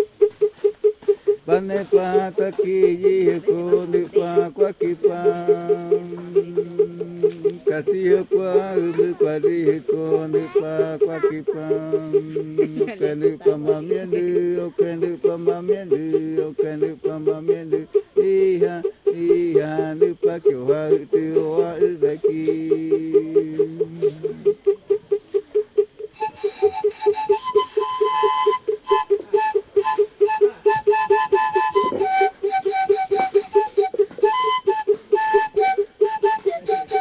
Brillo Nuevo, Pevas, Loreto, Peru: Bora fishing good spell
A Bora good spell during fishing with barbasco poison: Bora singing, turtle shell percussion, and pan flute